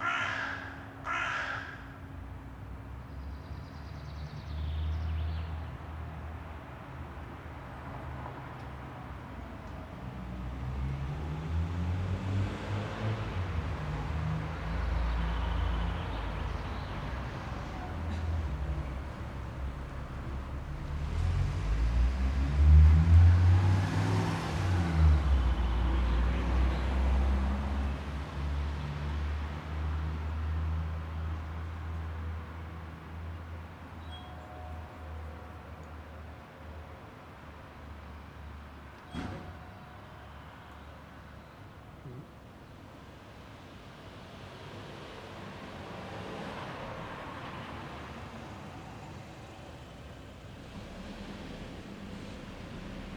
Wil, Switzerland - Street sounds and 8am church bell
Wil waking up on a Sunday morning. Cars passing, mopeds and the 8am church bell.